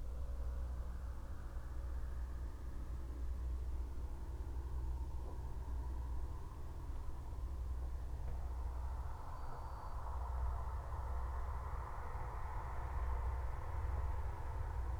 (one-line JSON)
{"title": "Chapel Fields, Helperthorpe, Malton, UK - distant firework display ...", "date": "2020-11-05 19:45:00", "description": "distant firework display ... with slightly closer tawny owl calling ... xlr SASS on tripod to Zoom F6 ... all sorts of background noise ...", "latitude": "54.12", "longitude": "-0.54", "altitude": "77", "timezone": "Europe/London"}